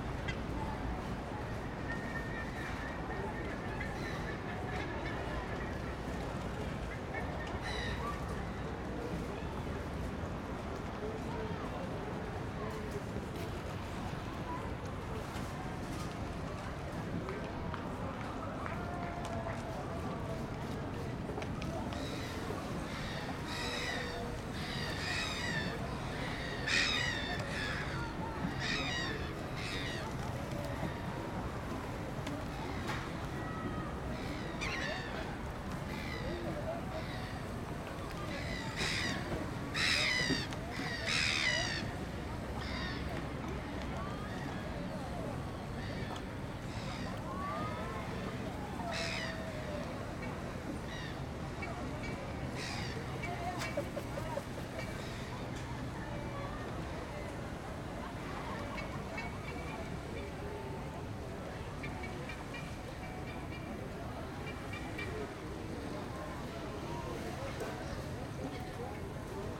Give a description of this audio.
This is a recording of the harbour located in Valdivia. I used Sennheiser MS microphones (MKH8050 MKH30) and a Sound Devices 633.